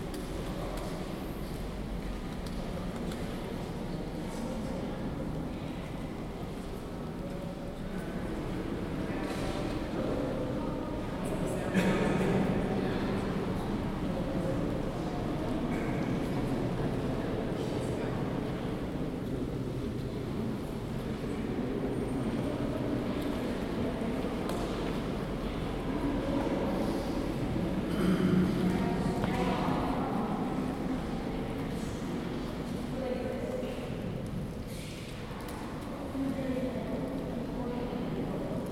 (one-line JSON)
{"title": "Westminster Abbey - Chapter House. - Chapter House, Westminster Abbey", "date": "2017-06-22 12:25:00", "description": "Includes a lovely few moments near the end. A group of small boys, one of whom has hiccups, start giggling due to the noise their friend is making in this otherwise quiet place. A lovely addition.", "latitude": "51.50", "longitude": "-0.13", "altitude": "14", "timezone": "Europe/London"}